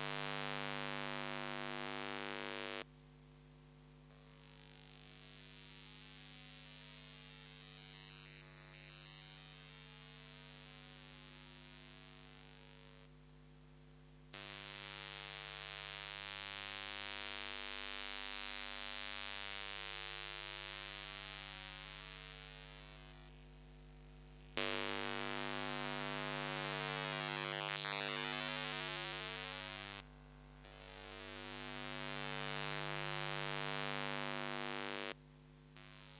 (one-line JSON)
{"title": "Pierres, France - Garland light", "date": "2017-12-29 18:20:00", "description": "Electromagnetic field song of a garland light. A classical object becomes so strange with this kind of microphone !", "latitude": "48.59", "longitude": "1.58", "altitude": "110", "timezone": "Europe/Paris"}